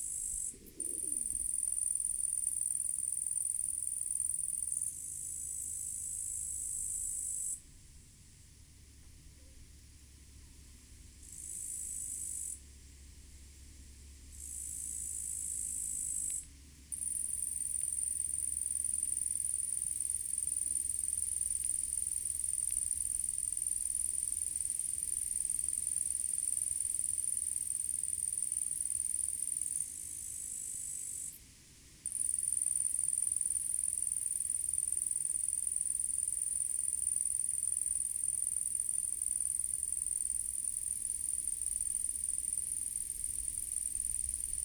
Cvrčci na louce nad Václavicemi